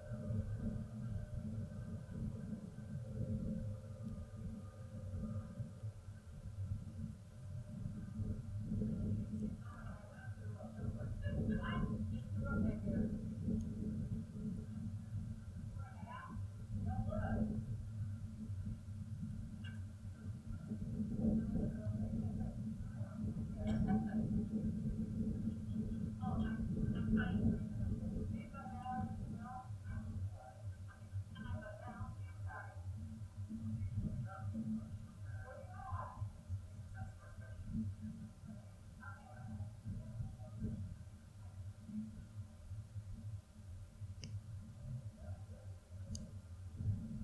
Recording from contact mic attached to metal support structure of lighthouse catwalk. Catwalk allowed lighthouse keeper access to lighthouse in high waves.
Michigan, United States